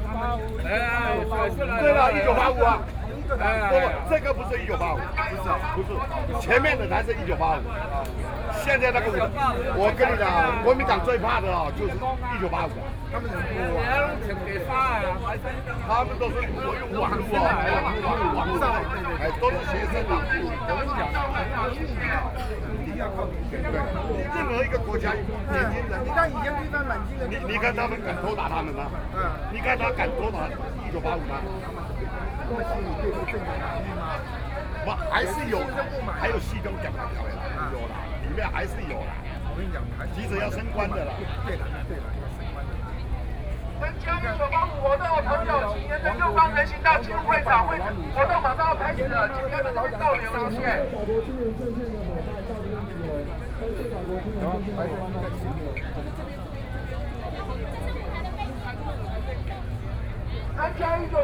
Zhongshan S. Rd., Taipei - Protest
Protest, Roads closed, Sony PCM D50 + Soundman OKM II